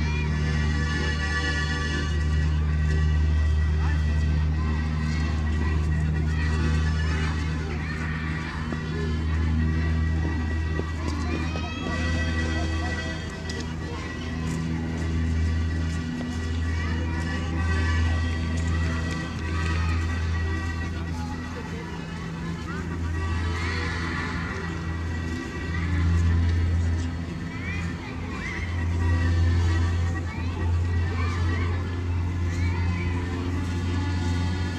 Renovated central square of Panevezys city. People, christmas tree...
Panevėžio apskritis, Lietuva, 21 November 2020